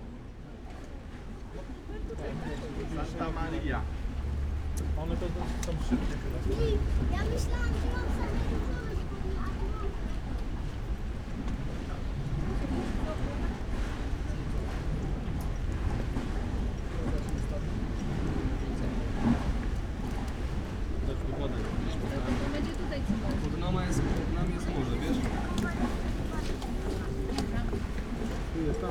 Sopot, Poland, on the pier
light rain, people seeking for hiding on the pier
14 August 2014